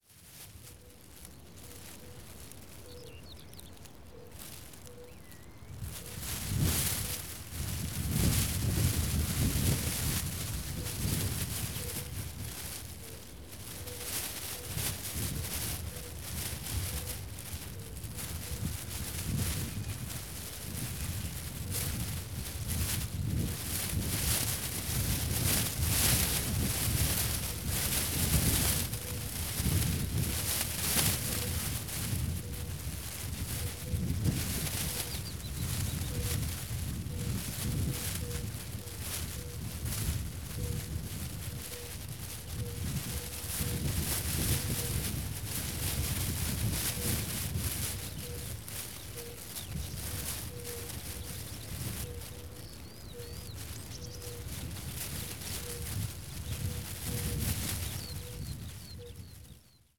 {"title": "Morasko, at the pond near Poligonowa road - plastic bag", "date": "2013-04-25 11:21:00", "description": "a plastic bag tangled in the bushes speaking its voice in the wind. spring nature ambience around.", "latitude": "52.49", "longitude": "16.91", "altitude": "99", "timezone": "Europe/Warsaw"}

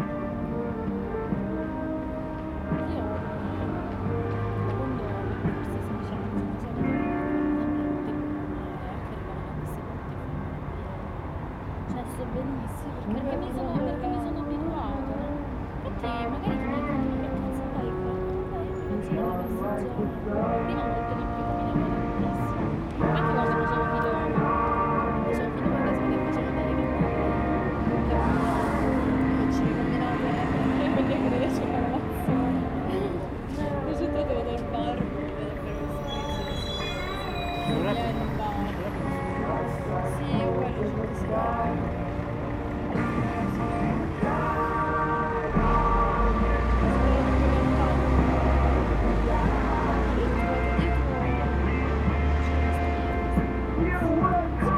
{"title": "Taranto, Province of Taranto, Italy - Conversation on leaving and be elsewhere", "date": "2012-06-27 20:20:00", "description": "Pink Floyd played back by a posh cafe' by the sea.", "latitude": "40.47", "longitude": "17.23", "altitude": "9", "timezone": "Europe/Rome"}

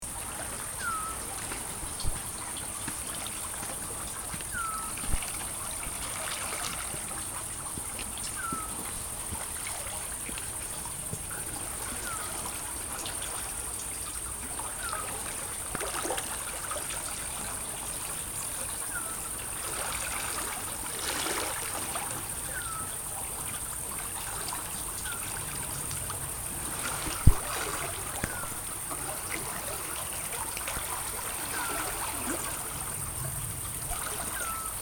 {"title": "Parabiago, MI, Italia - Richiamo dell'assiolo", "date": "2012-07-18 22:02:00", "description": "I partecipanti al WLD 2012 fischiando richiamano l'assiolo alla Barsanella lungo il Canale Villoresi.", "latitude": "45.54", "longitude": "8.93", "altitude": "179", "timezone": "Europe/Rome"}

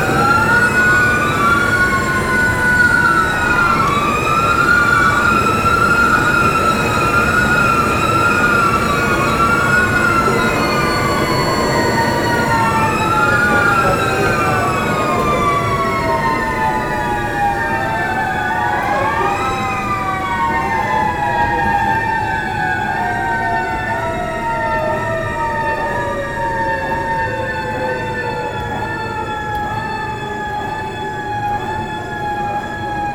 {"title": "Ehrenfeld, Köln, Deutschland - wohn-bar - passagen exhibtion - inflatable furniture", "date": "2014-01-18 20:00:00", "description": "At an exhibition room of the wohn-bar during the passagen 2014. The sound of an installation with inflatable furniture objects.\nsoundmap nrw - art spaces, topographic field recordings and social ambiences", "latitude": "50.95", "longitude": "6.91", "timezone": "Europe/Berlin"}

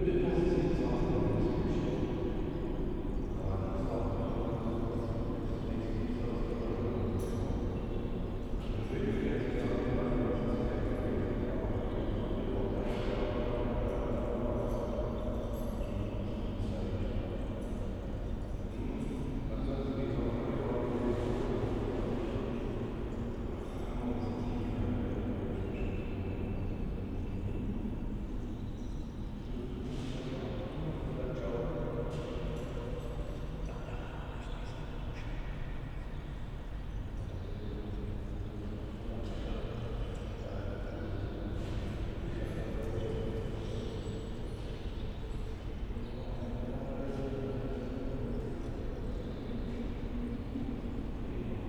{"title": "crematorium, Baumschulenweg, Berlin - voices", "date": "2012-03-15 10:15:00", "description": "reverberating voices in the crematorium hall.\n(tech note: SD702, Audio Technica BP4025)", "latitude": "52.46", "longitude": "13.49", "altitude": "38", "timezone": "Europe/Berlin"}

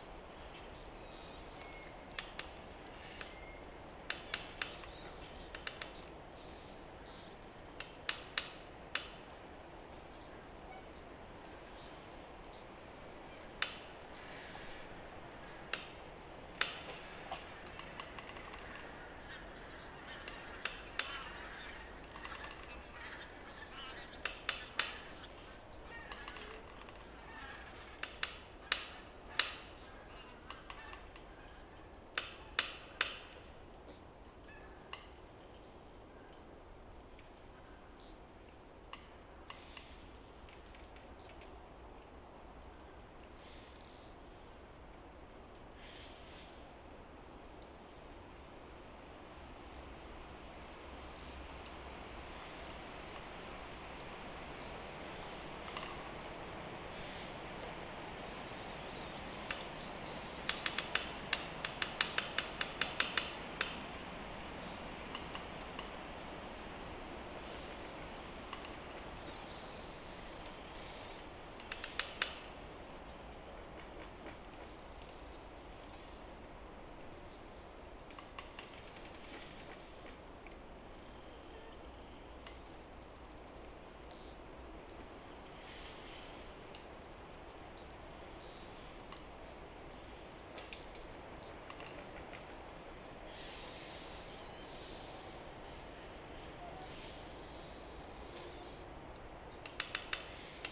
{"title": "Vyžuonos, Lithuania, wind and trees", "date": "2022-04-10 17:30:00", "description": "Wind rises, rain approaches. Half fallen trees cracking.", "latitude": "55.57", "longitude": "25.52", "altitude": "108", "timezone": "Europe/Vilnius"}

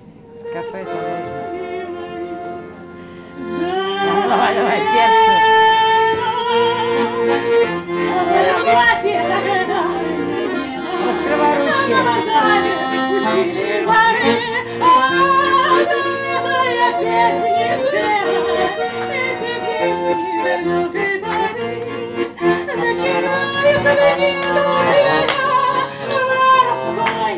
{"title": "Wrangelkiez, Berlin, Deutschland - kvartira 01", "date": "2015-11-17 22:40:00", "latitude": "52.50", "longitude": "13.44", "altitude": "39", "timezone": "Europe/Berlin"}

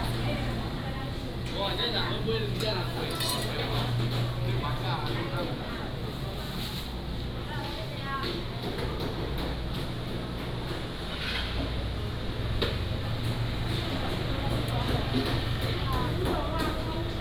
{"title": "鹿港公有零售市場, Lukang Township - Walking in the indoor market", "date": "2017-02-15 11:31:00", "description": "Walking in the indoor market", "latitude": "24.05", "longitude": "120.43", "altitude": "13", "timezone": "GMT+1"}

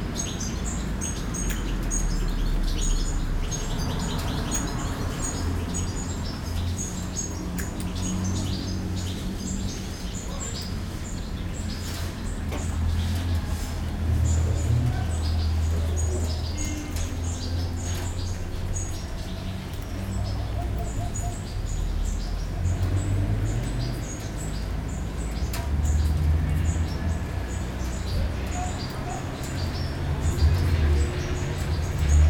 {"title": "Amasia, Arménie - The small Amasia city soundscape", "date": "2018-09-12 13:00:00", "description": "Sound of the center of Amasia, during a 20 minutes lunch break. Bird singing, old cars passing, some people at work.", "latitude": "40.95", "longitude": "43.79", "altitude": "1876", "timezone": "Asia/Yerevan"}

